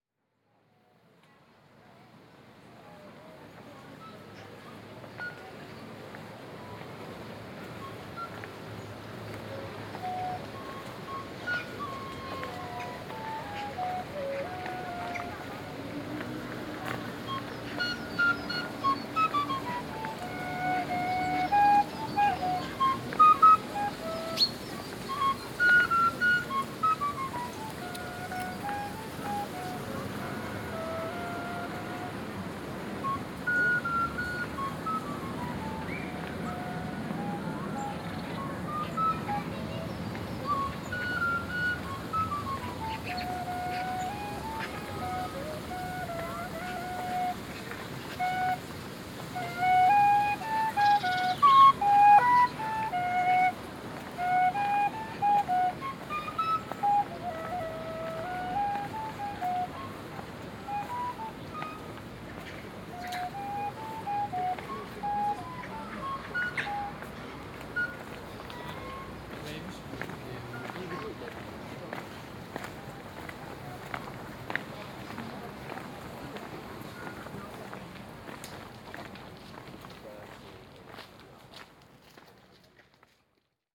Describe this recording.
Binaural recording of following a trail of a mysterious flute player in the Nantes Botanic Garden, Sony PCM-D100, Soundman OKM